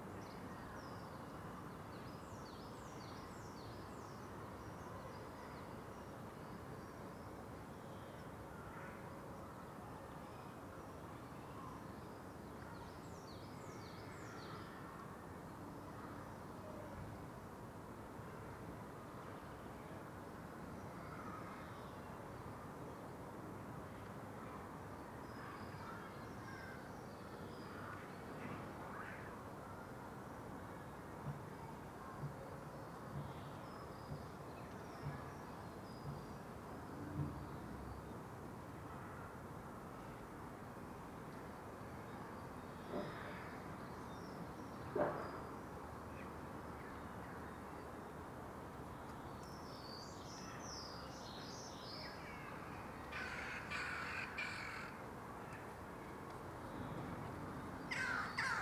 Contención Island Day 70 inner west - Walking to the sounds of Contención Island Day 70 Monday March 15th
The Drive Westfield Drive Parker Avenue Beechfield Road
Old bricks
dropping mortar
and crowned in ivy
The whine and growl of builders
ricochets around
hard to localise
In bright sun
passing walkers are well wrapped up
a chill wind blows